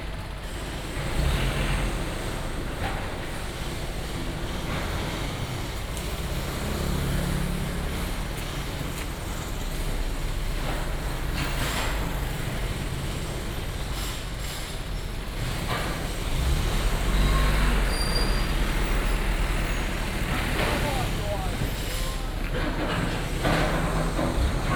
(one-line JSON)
{"title": "Ln., Sec., Heping E. Rd., Da’an Dist. - Construction Sound", "date": "2012-05-31 14:21:00", "description": "Traffic Sound, Construction Sound, Building site, Building demolition renovation\nSony PCM D50+ Soundman OKM II", "latitude": "25.03", "longitude": "121.55", "altitude": "24", "timezone": "Asia/Taipei"}